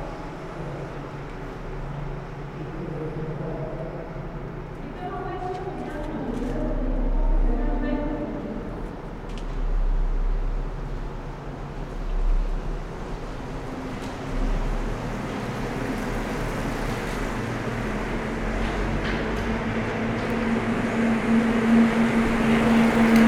Tunnel cyclable, Duingt, France - Dans le tunnel
A l'intérieur du tunnel de la piste cyclable du lac d'Annecy à Duingt, à l'écoute des pneus, diversité des cyclistes de passage, un mono roue électrique....réverbération de ce tunnel courbe, vestige de l'ancienne ligne de chemin de fer Annecy Ugine de la compagnie PLM.
France métropolitaine, France, August 2022